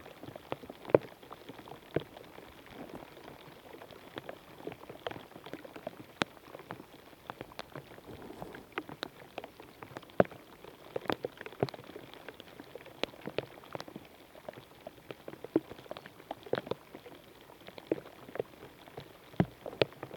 Urbanização Vila de Alva, Cantanhede, Portugal - Rain falling on a dead tree trunk
Sound of rain falling on a dead tree trunk captured with a contact microphone.
Cantanhede, Coimbra, Portugal, 22 April 2022